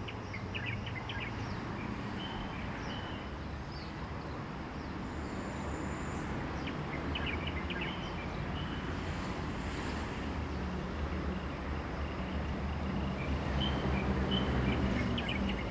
Taomi Ln., Puli Township - Bird calls
Bird calls, Frogs chirping, In the parking lot, Sound of insects
Zoom H2n MS+XY